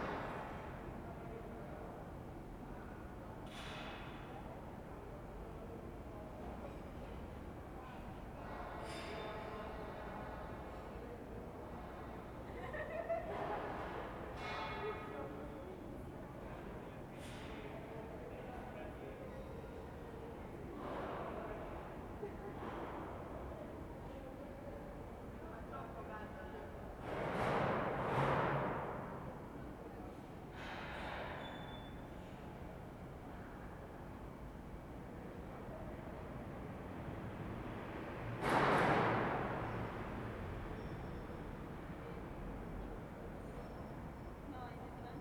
Ascolto il tuo cuore, città. I listen to your heart, city. Several chapters **SCROLL DOWN FOR ALL RECORDINGS** - "Terrace mid January afternoon, in seclusion in the time of COVID19": Soundscape
"Terrace mid-January afternoon, in seclusion in the time of COVID19": Soundscape
Chapter CLXXXV of Ascolto il tuo cuore, città. I listen to your heart, city
Friday, January 14th, 2022. Fixed position on an internal terrace at San Salvario district Turin, About second recording of 2022 and first recording being myself in seclusion as COVID 19 positive
Start at 1:16 p.m. end at 1:46 p.m. duration of recording 29'37''.
Portable transistor radio tuned on RAI-RadioTre acts as a time and place marker.
14 January 2022, 13:46